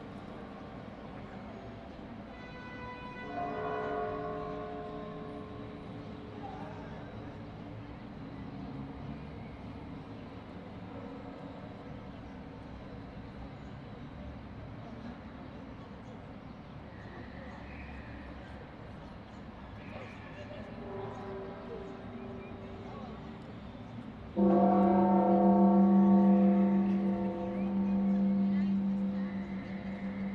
Recorded with a pair of DPA 4060s and a Marantz PMD661